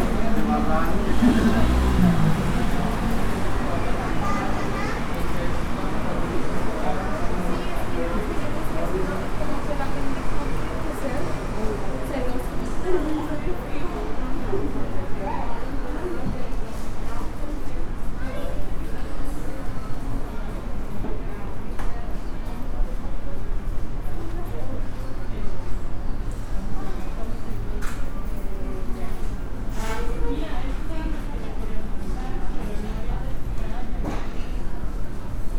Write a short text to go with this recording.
Walking inside Liverpool. I made this recording on june 15th, 2022, at 2:38 p.m. I used a Tascam DR-05X with its built-in microphones. Original Recording: Type: Stereo, Esta grabación la hice el 15 de junio 2022 a las 14:38 horas. Usé un Tascam DR-05X con sus micrófonos incorporados.